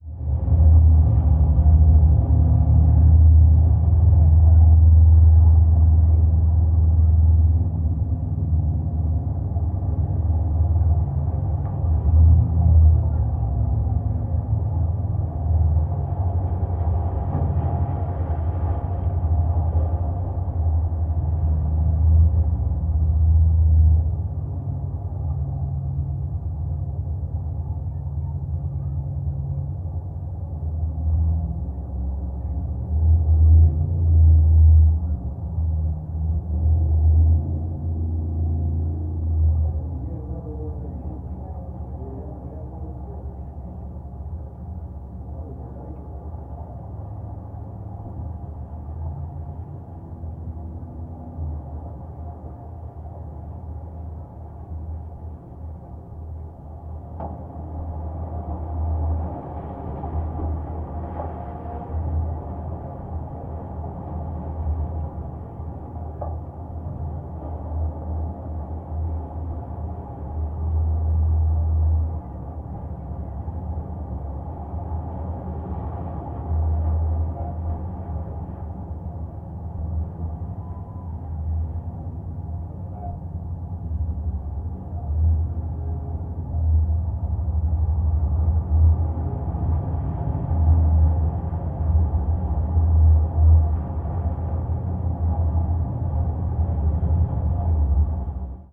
South Haven Lighthouse, South Haven, Michigan, USA - South Haven Lighthouse Geophone
Geophone recording from South Haven Lighthouse
Van Buren County, Michigan, United States, 23 July 2022